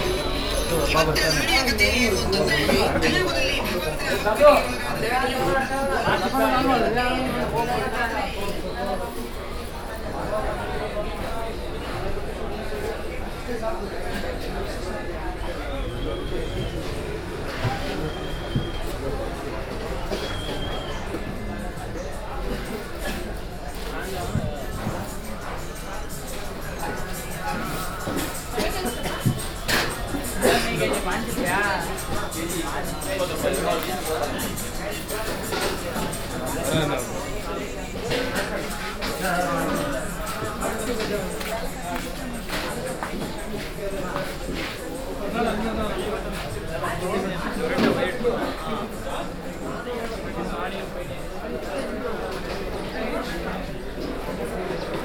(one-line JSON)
{"title": "Bangalore, Krishnarajendra-market, City market - Krishnarajendra-market", "date": "2009-11-14 13:04:00", "description": "India, Karnataka, Bangalore, Krishnarajendra-market", "latitude": "12.97", "longitude": "77.58", "altitude": "898", "timezone": "Asia/Kolkata"}